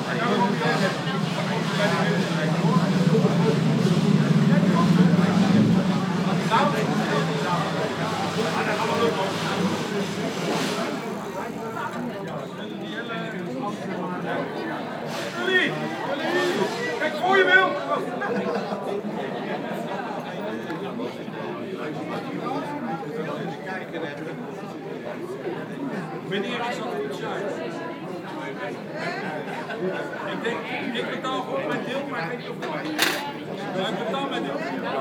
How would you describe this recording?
Katwijk-Aan-Zee, Taatedam. Lively discussions on the terrace during a very sunny afternoon.